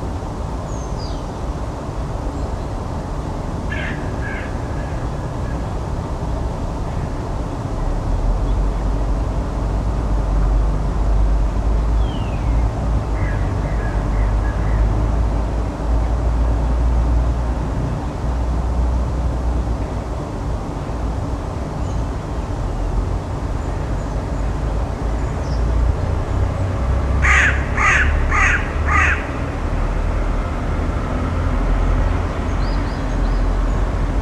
Crows and other birds speak to each other in the reverberant place. Their voices woke me up. It is cloudy. One of the crows fly by near my recording spot. There is a noise of the huge twenty lane highway (Leningradsky Prospekt) on the background. Trees have no leafs yet, so you can clearly hear the traffic that circa 700 meters away from the recording spot.
Recorded on Zoom H5 built-in X/Y stereo microphone by hand.
25 March 2019, 06:00